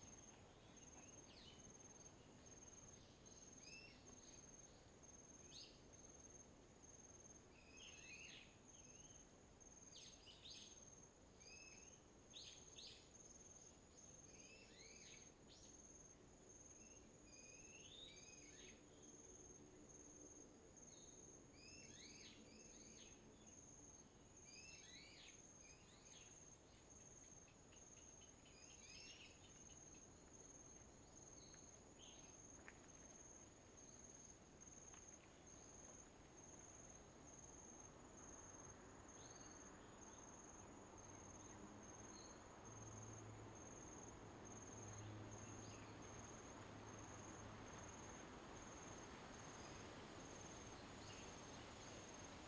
Imowzod, Koto island - In the woods
Birds singing, In the woods, Wind
Zoom H2n MS +XY